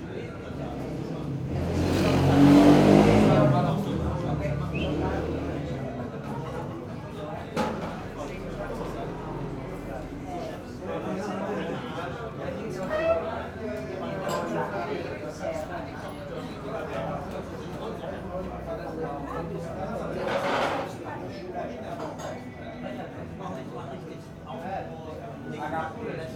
Kallidromiou road, Athen - street cafe ambience
friendly cafe in Kallidromiou road, on a Saturday early afternoon. 2nd visit here, for a greek coffee.
(Sony PCM D50)
Athina, Greece